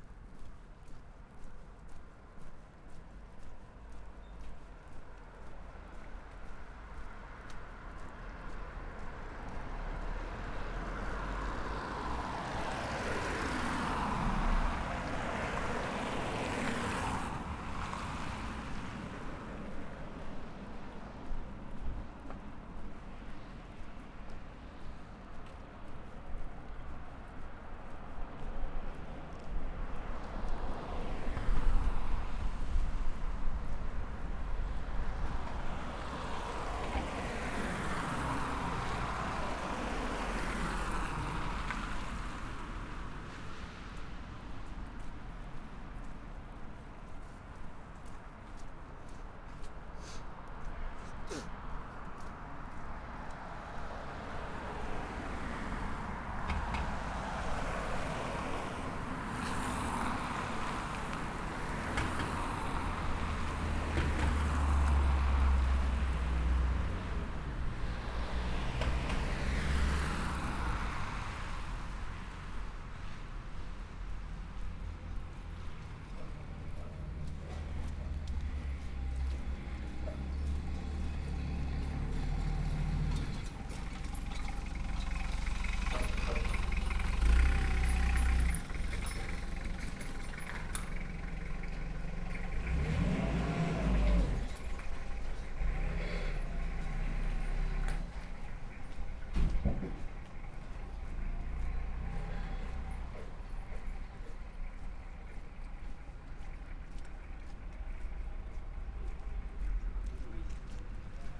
Tartu, Estonia - Soundwalk from Purde street to restaurant Aparaat
DPA 4061 microphones attached to the backbag, recorded while walking. Starts from room, going outsides, on streets and entering restaurant, joining others around table.